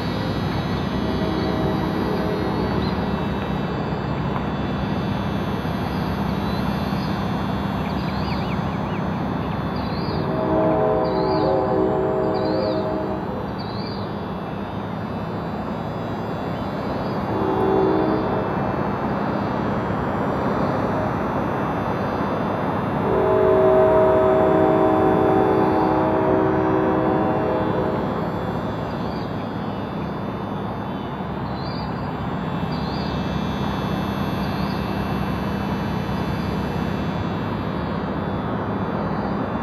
CLUI Houston yard and shoreline with industrial EMF buzz
TX, USA